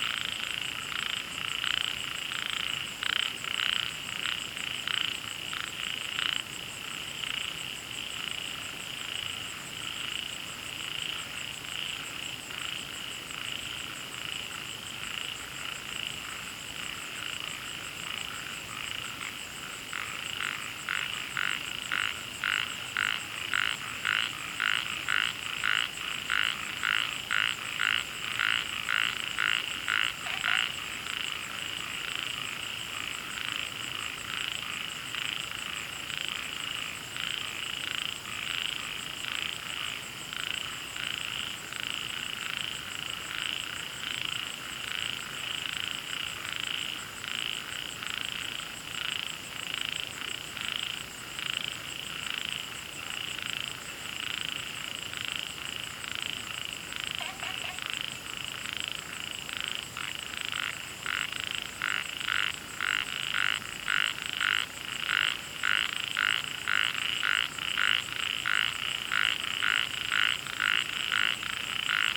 茅埔坑溼地公園, 桃米里, Taiwan - Frogs chirping
Frogs chirping, Wetland
Zoom H2n MS+XY